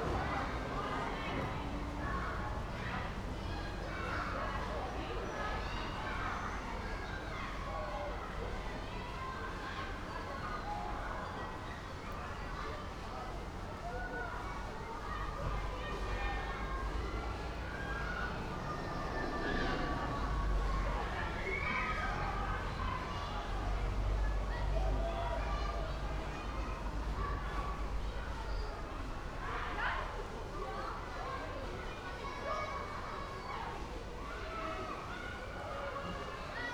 Sq. Emile Mayrisch, Esch-sur-Alzette, Luxemburg - schoolyard
sound from the school yard, Sq. Emile Mayrisch, Esch-sur-Alzette
(Sony PCM D50)